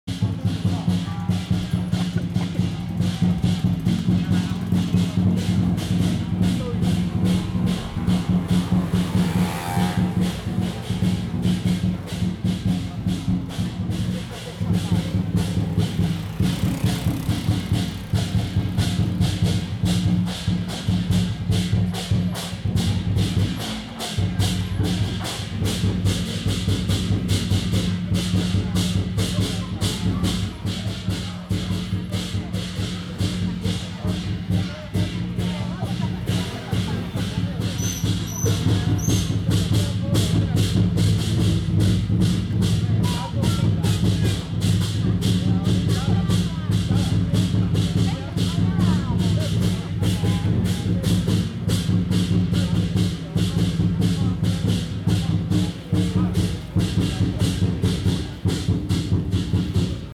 Sh-Luo temple 西羅殿 - Pilgrimage activity
The regional pilgrimage activity.進香活動
West Central District, Tainan City, Taiwan, March 2014